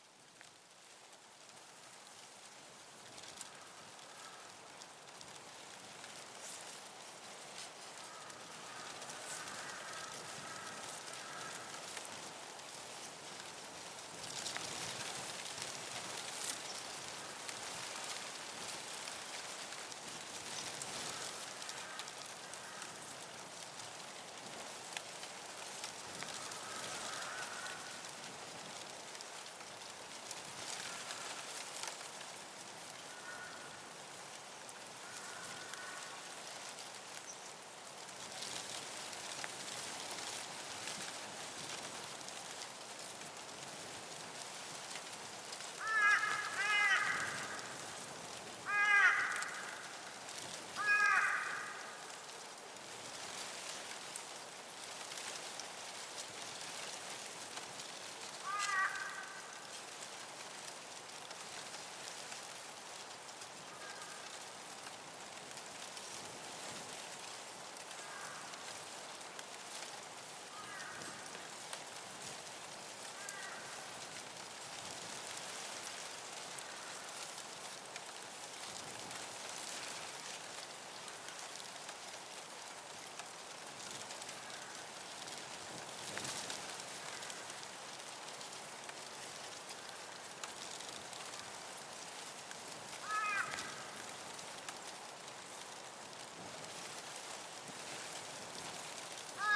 Simpson's Gap, West MacDonnell Ranges, NT, Australia - Wind in the Reeds and Crows in the Sky - Simpson's Gap
A windy morning blowing the reeds by the Simpson's Gap waterhole with Crows flying overhead - DPA 4060 pair, Zoom H4n
White Gums NT, Australia, 3 October 2015